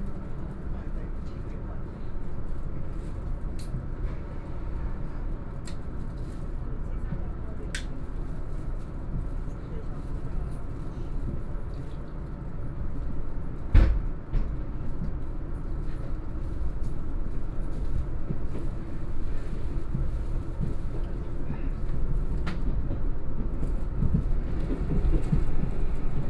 from Hsinchu Station to Zhubei Station, Sony PCM D50 + Soundman OKM II
Hsinchu County, Taiwan, September 2013